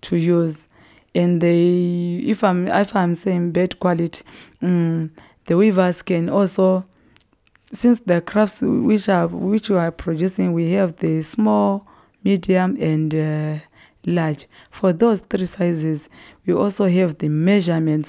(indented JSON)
{
  "title": "Office Zubo Trust, Binga, Zimbabwe - Donor sharing secrets of ilala basket weaving",
  "date": "2018-09-27 15:15:00",
  "description": "Donor and I are diving into some of the secrets of ilala weaving. i’m fascinated to understand more about the actual making of ilala baskets and the intricate knowledge on how best to treat the natural resource of ilala (palm leave) to ready it for producing “good quality crafts”. The occasion for this interview recording with Donor Ncube was her organising, participating in and documenting the ilala weavers workshop in Chinonge. Donor is ilala crafts and financial assistance officer at Zubo Trust.",
  "latitude": "-17.61",
  "longitude": "27.35",
  "altitude": "625",
  "timezone": "Africa/Harare"
}